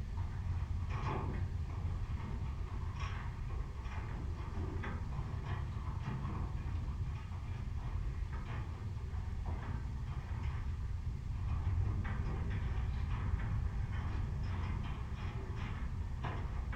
Utena, Lithuania, fence
contact mics on the fence
July 2018